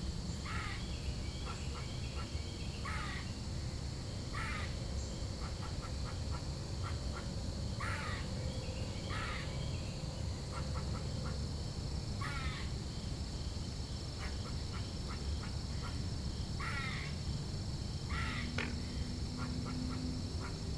Chubb Trail, Eureka, Missouri, USA - 1923 Tunnel
Recording from within a tunnel that passes under train tracks. Anthrophonic sounds greater from the left channel and biophonic greater from the right channel.